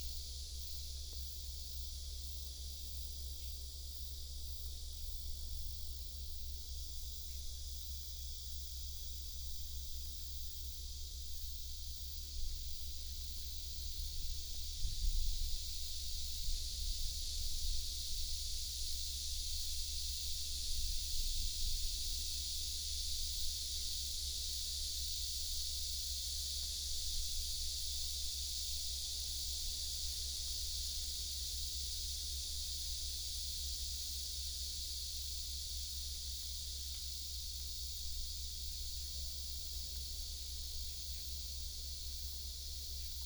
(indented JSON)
{
  "title": "五結鄉季新村, Yilan County - In the woods",
  "date": "2014-07-29 10:40:00",
  "description": "In the woods, Hot weather, Cicadas, Birdsong",
  "latitude": "24.67",
  "longitude": "121.84",
  "altitude": "12",
  "timezone": "Asia/Taipei"
}